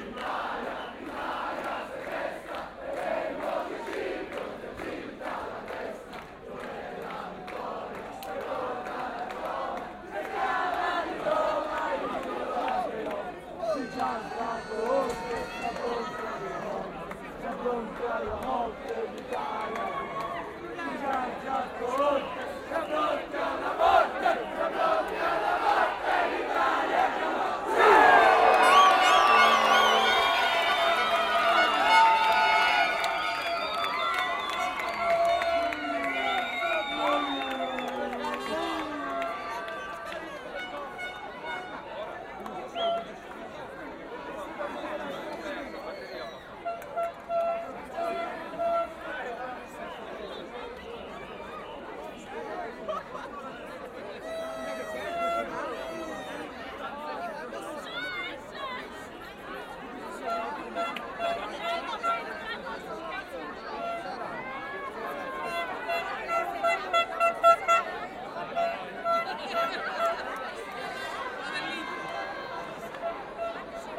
Italian Fans, Aarau, Schweiz - Italian Fans 1
In the European Championship Italy won a match against Spain. The italian fans of Aarau walks through the city and meet at a circle. Signalhorns, singing and shouting.